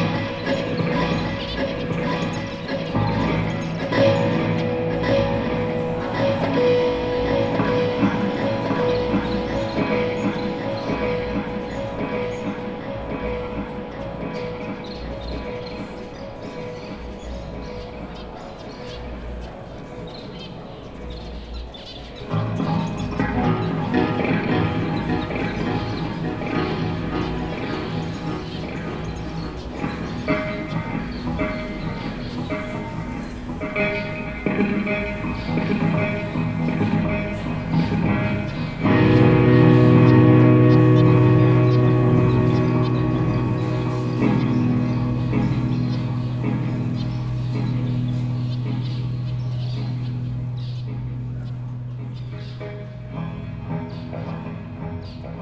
København K, København, Danemark - From here to ear
From Here to Ear, an installation by Celeste Boursier-Mougenot in Copenhagen Contemporary, recorded with Zoom H6
15 December 2016, ~12:00